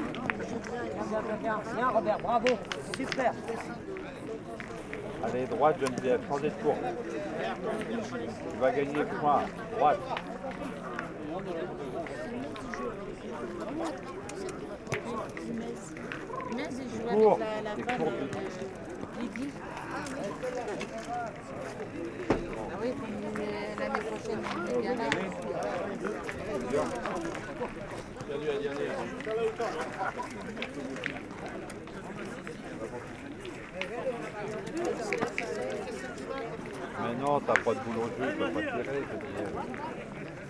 A huge bowling competition, with a lot of old persons playing and kindly joking.
Aubevoye, France, 21 September, 18:00